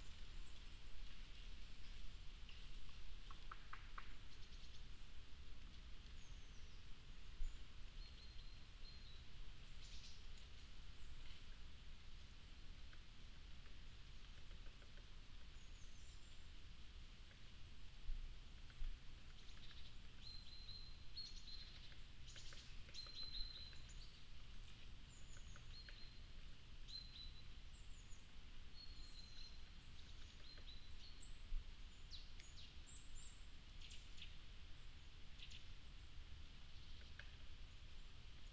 Schönbuch Nature Park, Heuberger Tor - Schönbuch Nature Park in early autumn
Naturpark Schönbuch im Frühherbst: Wind bewegt trockene Kastanienblätter im Baum und auf dem Boden, Vogel klopft gegen Baumrinde. Seltene 5 Minuten ohne Flugzeug-Geräusch.
Schönbuch Nature Park in early autumn: Wind moves dry chestnut leaves in the tree and on the ground, bird knocks against tree bark. Rare 5 minutes without aircraft noise.